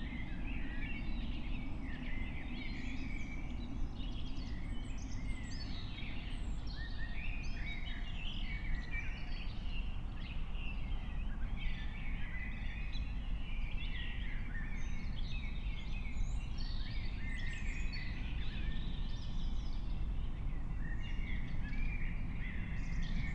05:15 Berlin, Königsheide, Teich - pond ambience